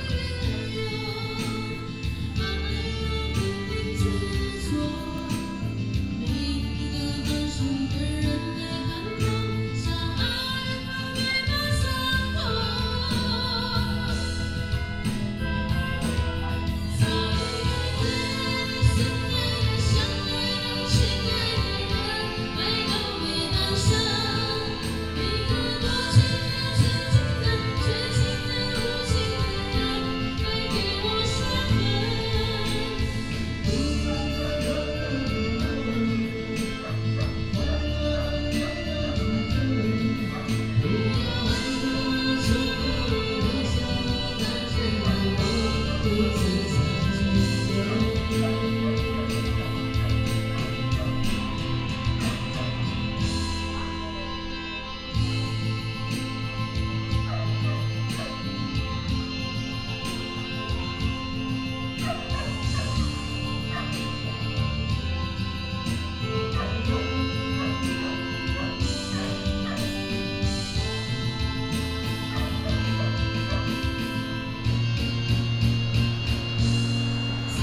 Dawu St., Dawu Township, Taitung County - Tribal evening
Karaoke, Traffic sound, Dog barking, Tribal evening